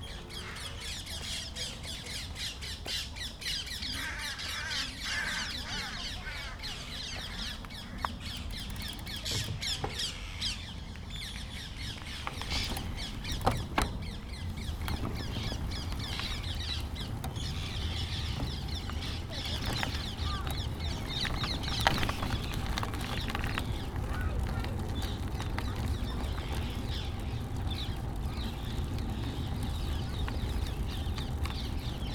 Gladstone Park, Dollis Hill House - bicycle ride, parakeets vs crows, tennis courts
England, United Kingdom, 20 November 2021